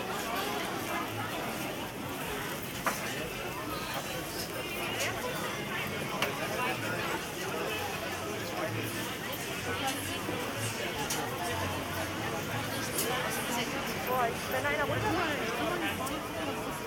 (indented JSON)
{
  "title": "Potsdamer Platz, Berlin, Allemagne - Weihnachtsmarkt",
  "date": "2021-12-25 16:15:00",
  "description": "Walking Postdamer Platz and visiting Christmas market with music and children gliding artificial slope on inner tubes (Roland R-07 + CS-10EM)",
  "latitude": "52.51",
  "longitude": "13.38",
  "altitude": "41",
  "timezone": "Europe/Berlin"
}